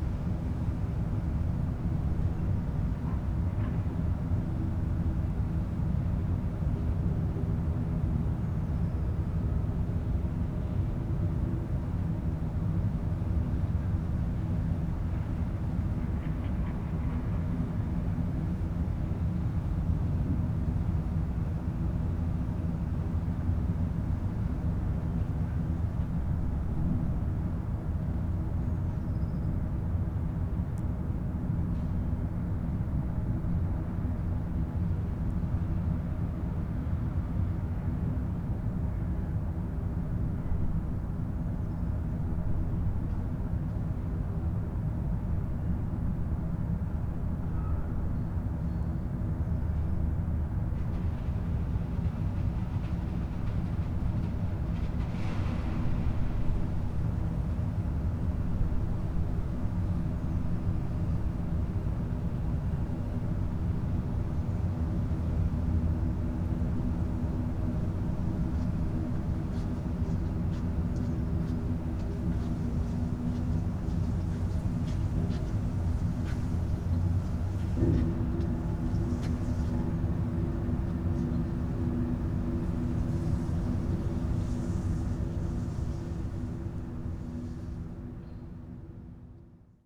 berlin: mergenthalerring - A100 - bauabschnitt 16 / federal motorway 100 - construction section 16: mobile concrete plant
mic in a precast concrete ring, drone of the plant, worker busy with a hose
the motorway will pass through this point
the federal motorway 100 connects now the districts berlin mitte, charlottenburg-wilmersdorf, tempelhof-schöneberg and neukölln. the new section 16 shall link interchange neukölln with treptow and later with friedrichshain (section 17). the widening began in 2013 (originally planned for 2011) and will be finished in 2017.
january 2014